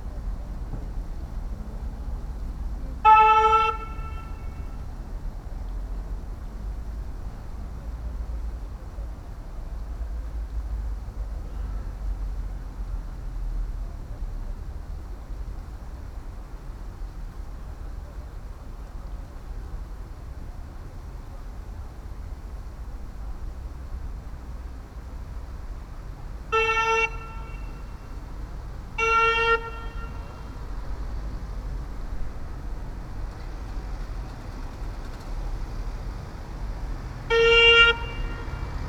20 October Square, Srem - PA system test
a PA system being set on the square. sound guy testing the system by playing some king of horn sound through it and talking into the microphone. (Roland R-07 internal mics)